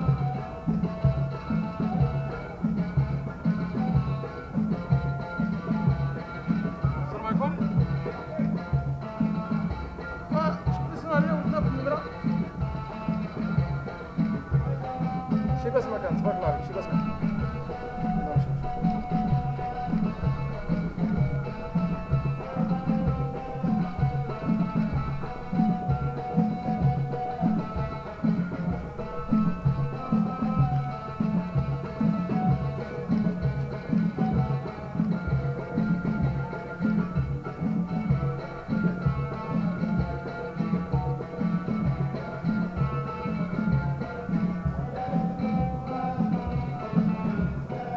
Madkhal Meski, Morocco - Berber music at night
Distant Berber music band and night atmosphere (dogs on the right). Click on mic at 7m45
Groupe de musique berbère, lointain. Ambiance de nuit (chiens sur la droite). “Click” sur le micro à 7m45